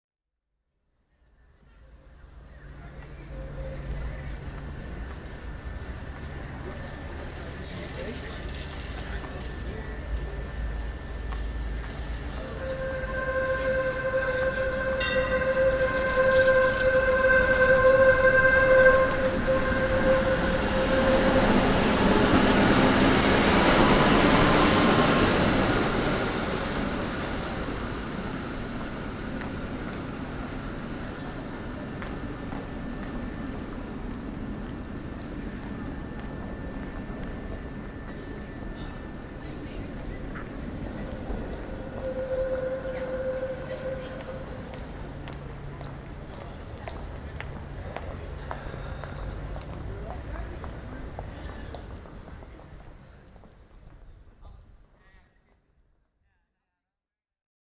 Tram in the Grote Halstraat
Tram, cycles and some pedestrians passing. Recorded in the Grote Halstraat in Den Haag (The Hague).
Zoom H2 recorder with Sound Professionals SP-TFB-2 binaural microphones.
The Hague, The Netherlands, 8 October 2009, 20:02